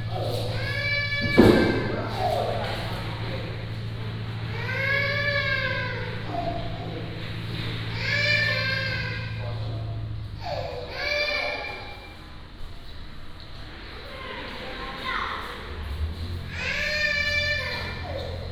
菜園海洋牧場遊客中心, Magong City - In the visitor center
In the visitor center